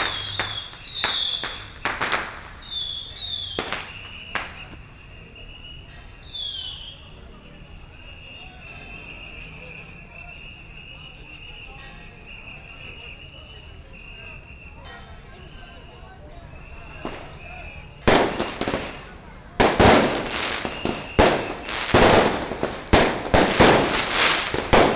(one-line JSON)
{"title": "Zhongshan Rd., Shalu Dist. - Matsu Pilgrimage Procession", "date": "2017-02-27 09:57:00", "description": "Firecrackers and fireworks, Traffic sound, Baishatun Matsu Pilgrimage Procession", "latitude": "24.24", "longitude": "120.56", "altitude": "14", "timezone": "Asia/Taipei"}